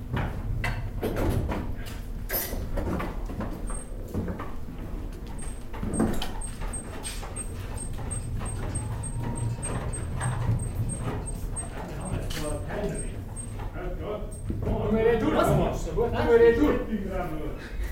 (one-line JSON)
{"title": "Volkstheater Flintsbach, backstage works", "description": "before the beginning of the performance, scenery works, calls etc. Opening night of the drama \"der juengste tag\". 200 year old wooden theatre, old technical devices. recorded june 6, 2008. - project: \"hasenbrot - a private sound diary\"", "latitude": "47.72", "longitude": "12.13", "altitude": "472", "timezone": "GMT+1"}